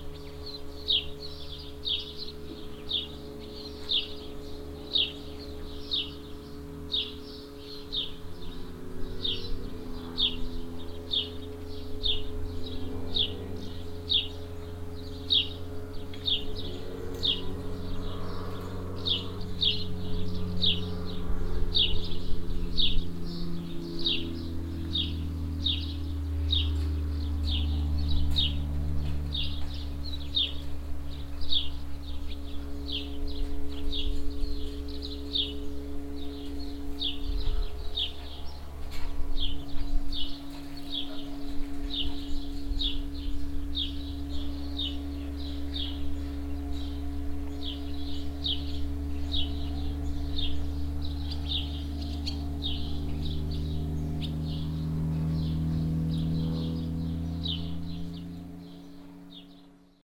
merscheid, barn yard
On a barn yard near a bigger cow shed. The sound of a generator, the moving animals in the shed, swallows flying around and a plane passing the sky.
Merscheid, Scheune
An einer Scheune in der Nähe einer größeren Kuhherde. Das Geräusch von einem Generator, die sich bewegenden Tiere in der Herde, Schwalben fliegen umher und ein Flugzeug überquert den Himmel.
Merscheid, basse-cour
Dans une basse-cour, à proximité d’une étable à vaches. Le bruit d’un générateur, les animaux qui bougent dans l’étable, des hirondelles qui volent un peu partout et un avion qui traverse le ciel.
Project - Klangraum Our - topographic field recordings, sound objects and social ambiences
Merscheid (Puetscheid), Luxembourg